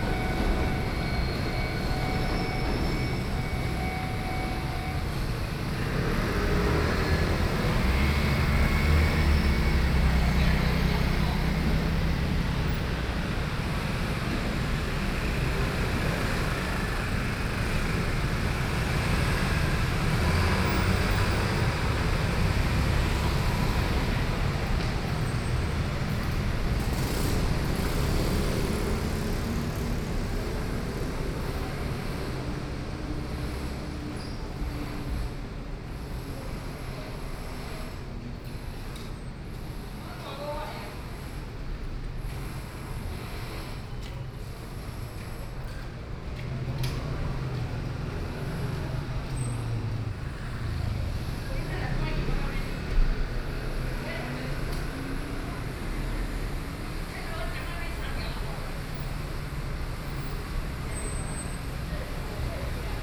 Chenggong 1st Rd., Ren’ai Dist., Keelung City - In front of railway crossings
Traffic Sound, In front of railway crossings